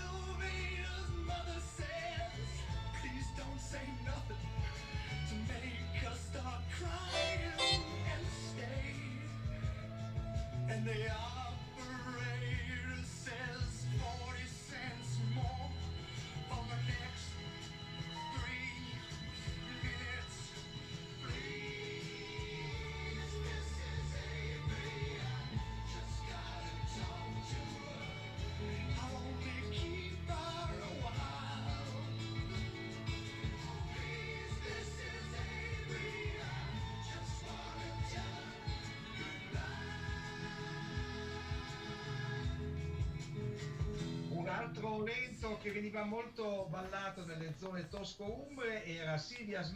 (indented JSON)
{
  "title": "Ascolto il tuo cuore, città. I listen to your heart, city. Several Chapters **SCROLL DOWN FOR ALL RECORDINGS - “La flânerie après trois mois aux temps du COVID19”: Soundwalk",
  "date": "2020-06-10 19:31:00",
  "description": "“La flânerie après trois mois aux temps du COVID19”: Soundwalk\nChapter CIII of Ascolto il tuo cuore, città. I listen to your heart, city\nWednesday, June 10th 2020. Walking in the movida district of San Salvario, Turin ninety-two days after (but day thirty-eight of Phase II and day twenty-five of Phase IIB and day nineteen of Phase IIC) of emergency disposition due to the epidemic of COVID19.\nStart at 7:31 p.m., end at h. 8:47 p.m. duration of recording 38'23'', full duration 01:15:52 *\nAs binaural recording is suggested headphones listening.\nThe entire path is associated with a synchronized GPS track recorded in the (kml, gpx, kmz) files downloadable here:\nThis soundwalk follows in similar steps to exactly three months earlier, Tuesday, March 10, the first soundtrack of this series of recordings. I did the same route with a de-synchronization between the published audio and the time of the geotrack because:",
  "latitude": "45.06",
  "longitude": "7.68",
  "altitude": "246",
  "timezone": "Europe/Rome"
}